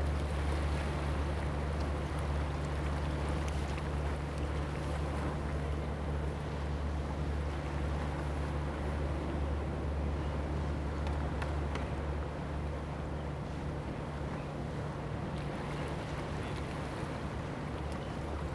花瓶岩, Hsiao Liouciou Island - Small beach
In the small coastal, Sound of the waves, Tourists, Cruise whistle
Zoom H2n MS +XY
Liuqiu Township, Pingtung County, Taiwan